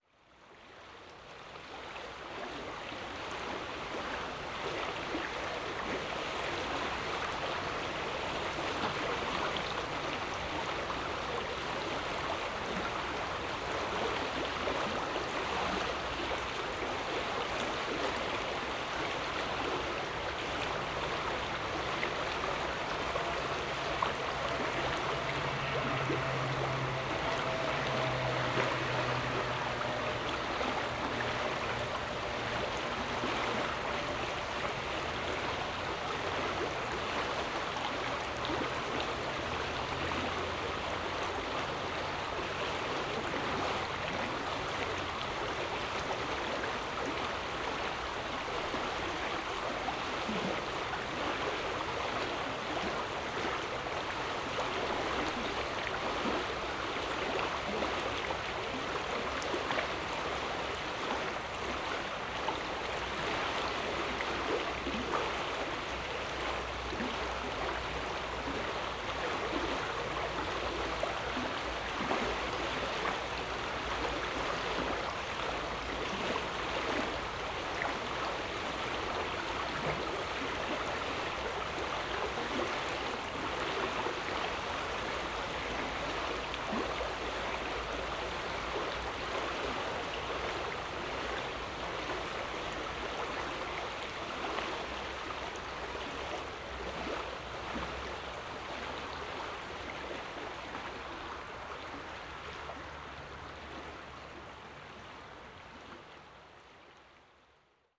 Ilmstraße, Bad Berka, Deutschland - River tones, forms, and gestures 5- 200421.PM22-23
A binaural project.
Headphones recommended for best listening experience.
A personally "defined" 400 Meter space of the Ilm river revealing its diverse tones, forms and gestures. The night peripheral ambience is relatively calm so there is less masking of the space.
Recording technology: Soundman OKM, Zoom F4.
20 April 2021, 22:50